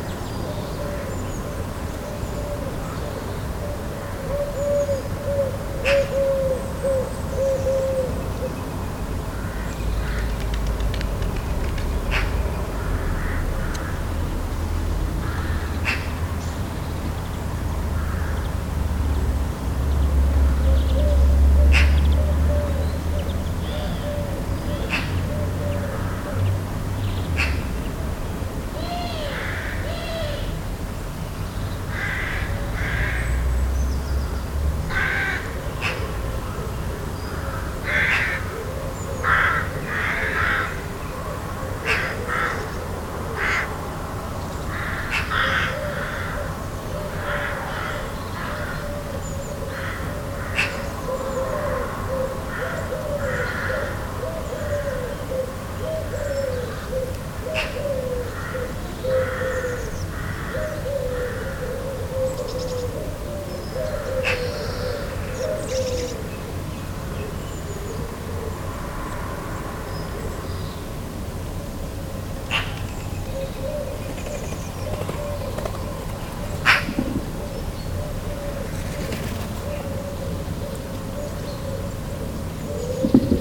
Maintenon, France - Magpies
Birds singing and general ambience in a semi-rural place.